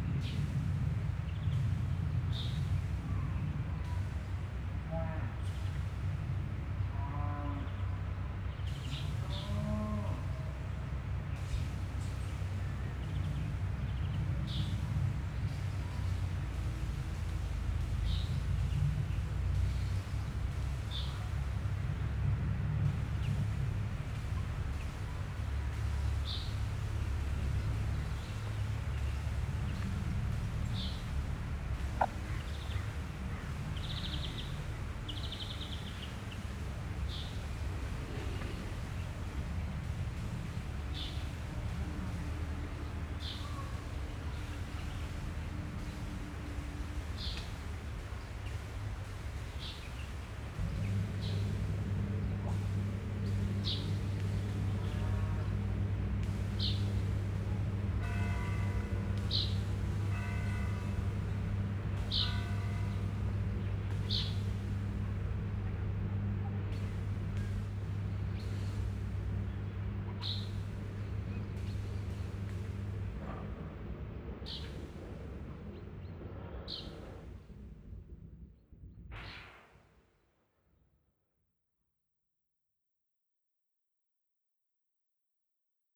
Huldange, Luxemburg - Huldange, evening atmosphere with bells
Abendstimmung im Ort. Der Klang eines Flugzeuges das am Himmel lang zieht, Kühe und Schafe muhen und mähen, Kinderstimmen, Vögel und die Glocken der Kirche.
An evening atmosphere of ther town, A plane passing by in the sky, cows and sheeps, childrens voices, birds and the bell of the church.